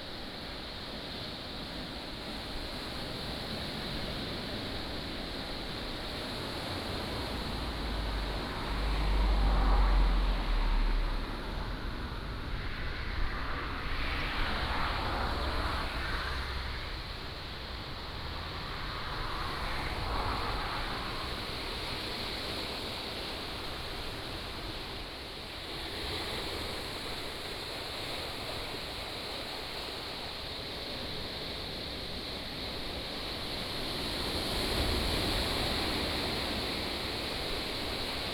sound of the waves, The sound of rain, Traffic Sound
Changbin Township, 花東海岸公路13號, 8 September 2014, ~14:00